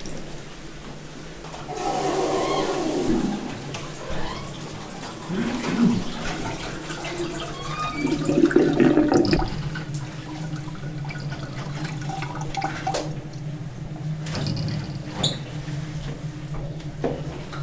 Maastricht, Pays-Bas - Librairy in a church
Boekhandel Dominicanen. A desecrated church is transformed into a vast library and in the apse, to a bar. It's rare enough (and what a decay) to highlight the sound of this kind of place. Walk in the establishment, elsewhere diehard. In 2008, the bookstore was ranked first in the ranking of the ten most beautiful bookstore in the world.